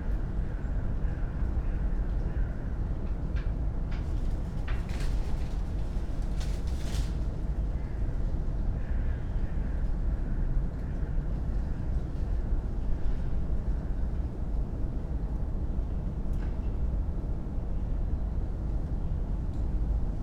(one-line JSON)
{"title": "Berlin Bürknerstr., backyard window - unidentified hum and noise", "date": "2013-11-25 07:22:00", "description": "a strange unidentified noise and deep hum heard on an early monday morning. it may be caused by works at the nearby Landwehrkanal, where sort of renovation is going on.\n(Sony PCM D50, DIY Primo EM172)", "latitude": "52.49", "longitude": "13.42", "altitude": "45", "timezone": "Europe/Berlin"}